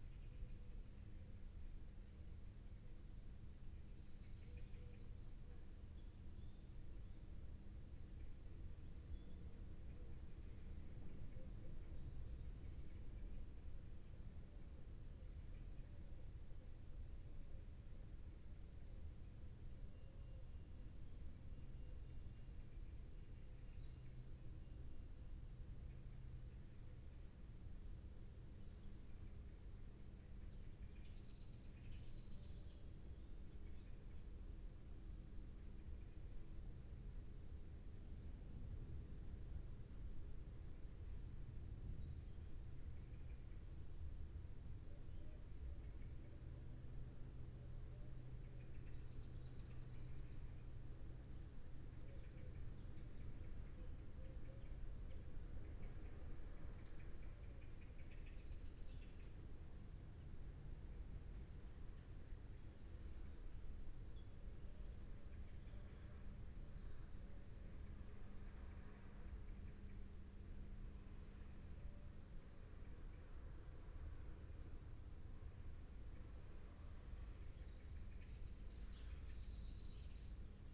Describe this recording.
Inside church with blackbird calls outside ... lavalier mics in parabolic ... background noise ... bird calls ... pheasant ...